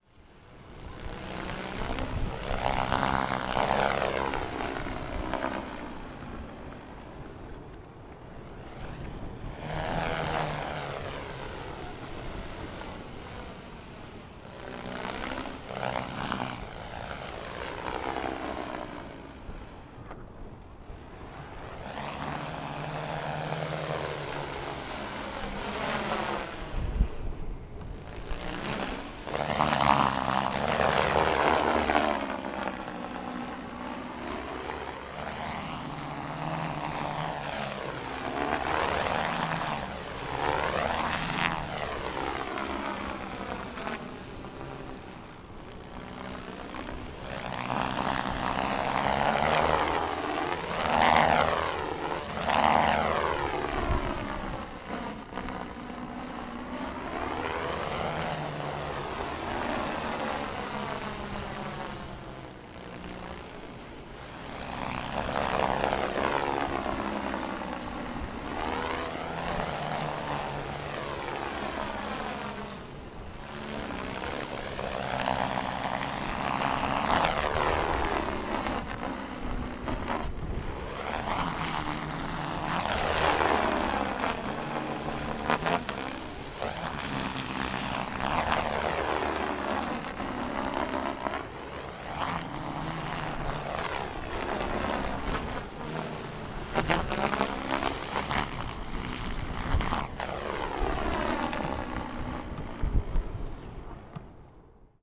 former airport berlin tempelhof. lots of kites in the very cold air.
Berlin, Germany